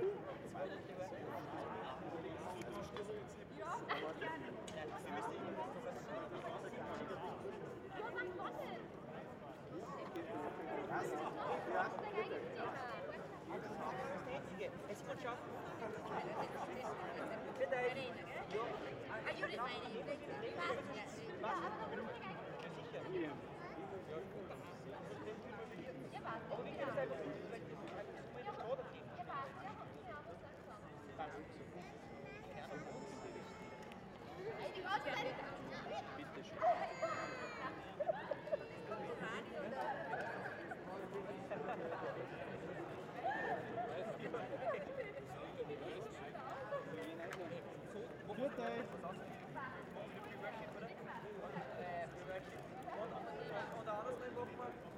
Domplatz, Salzburg, Österreich - Raumton Domplatz
Etwas Näher. Die Besucher der Messe verabschieden sich vor der Kirche.
Salzburg, Austria, April 2007